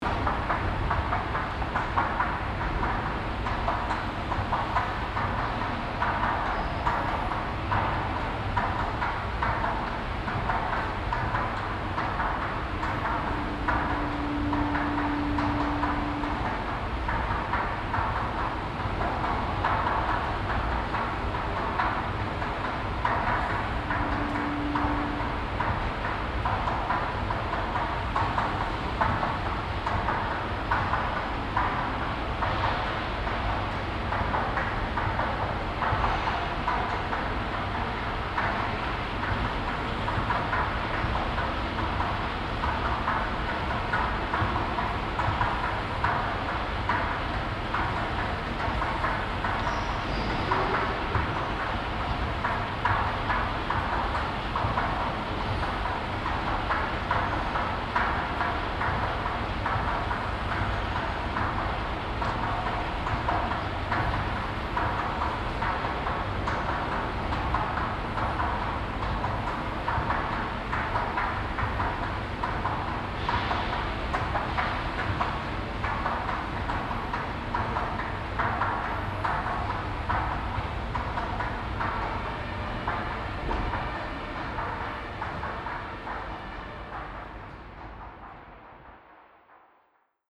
Rüttenscheid, Essen, Deutschland - essen, rüttenscheider str, subway station
In einer U Bahn Station. Der Klang der Rolltreppen. Gegen Ende ein Martinshorn das von der Straße herunterschallt.
Inside the subway station. The sound of the moving stairways.
Projekt - Stadtklang//: Hörorte - topographic field recordings and social ambiences